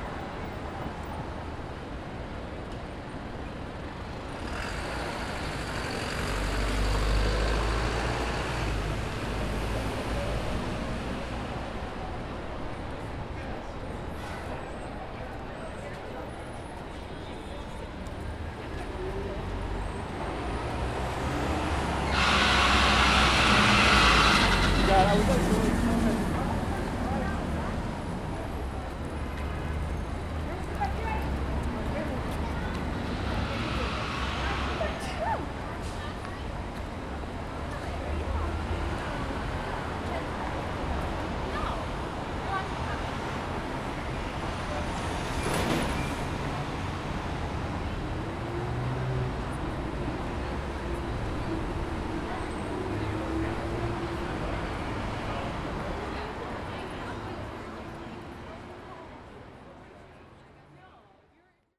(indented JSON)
{
  "title": "Chelsea, New York, NY, USA - Chelsea Market Crossroad",
  "date": "2016-10-17 14:24:00",
  "description": "Saturday afternoon under the high line\nat a cross road with tourists surrounding",
  "latitude": "40.74",
  "longitude": "-74.01",
  "altitude": "8",
  "timezone": "America/New_York"
}